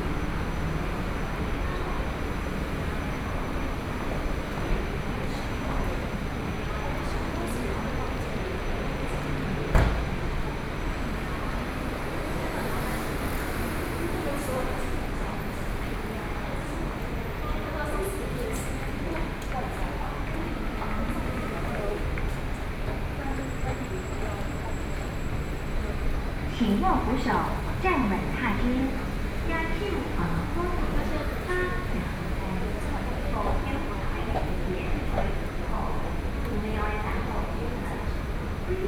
Xihu Station, Taipei - into the MRT station
walking into the MRT station, Sony PCM D50 + Soundman OKM II